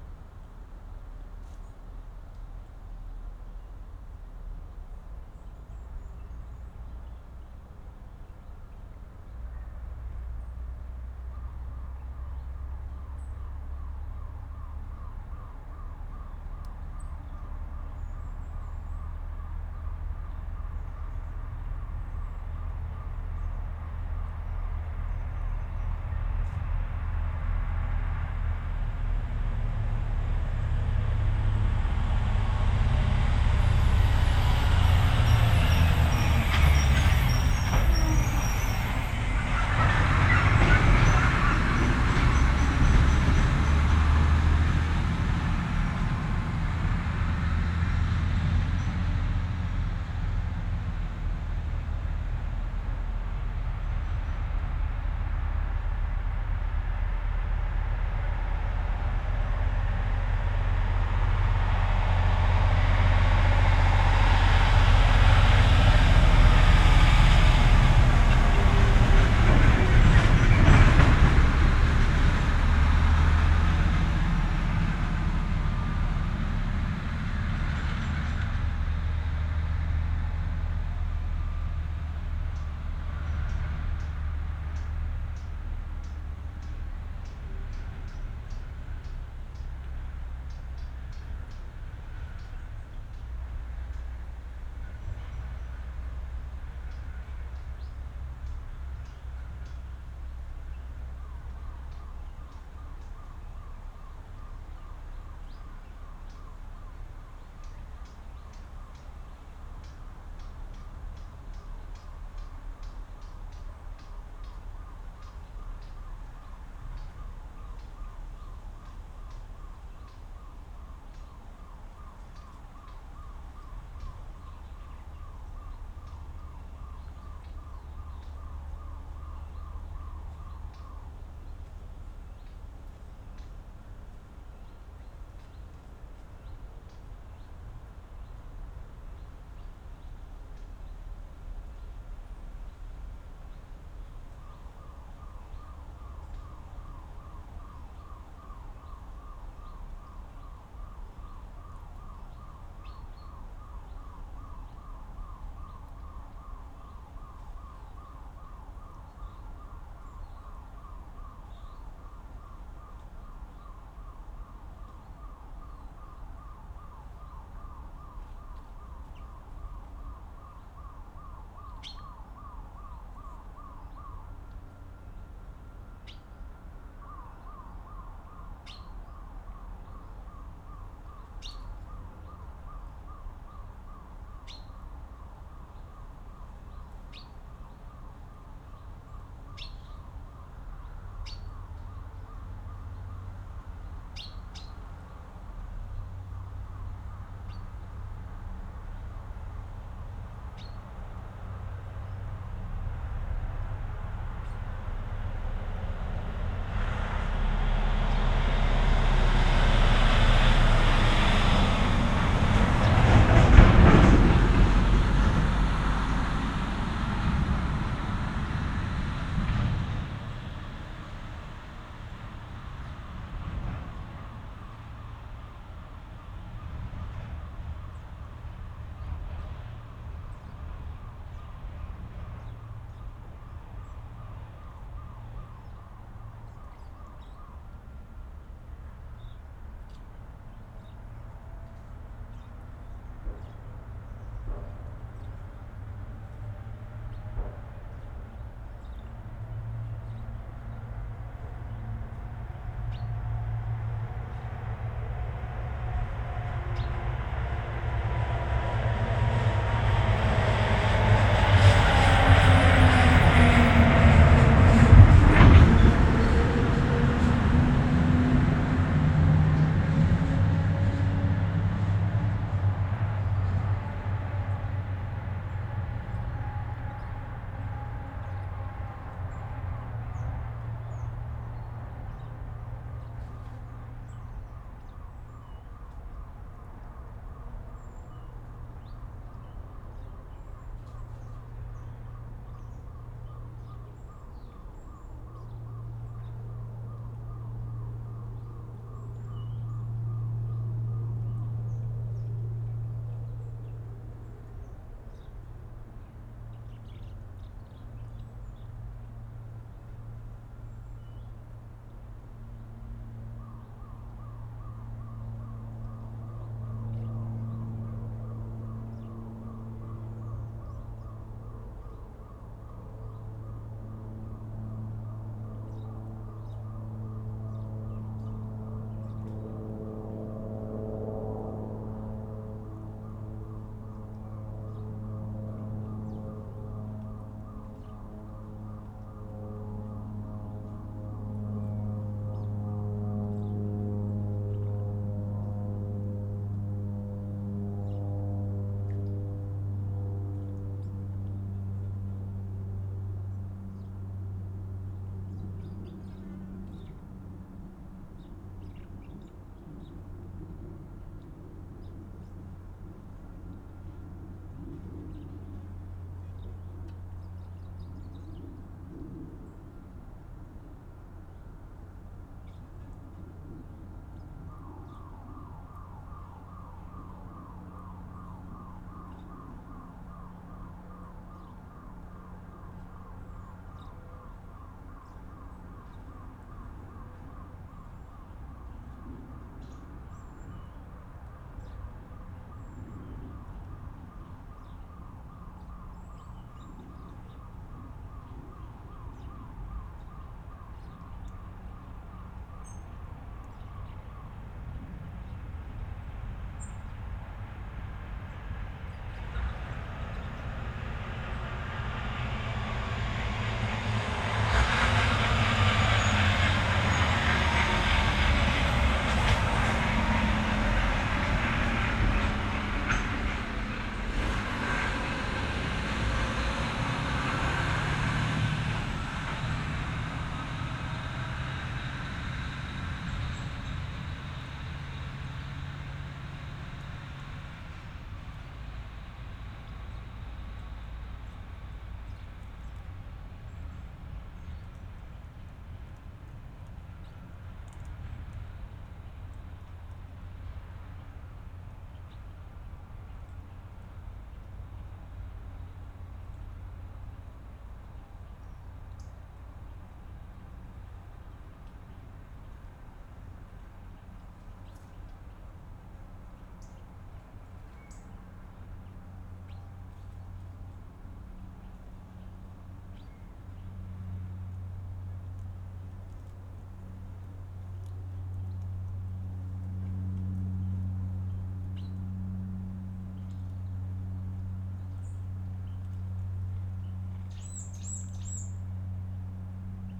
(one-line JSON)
{"title": "Mariánské Radčice, Tschechische Republik - Abandoned Fountain", "date": "2015-09-12 15:00:00", "description": "Abandoned fountain of the now devastated(due to brown coal excavation) village Libkovice. Trucks passing by transporting overburdon from the opencast mine.", "latitude": "50.58", "longitude": "13.68", "altitude": "252", "timezone": "Europe/Prague"}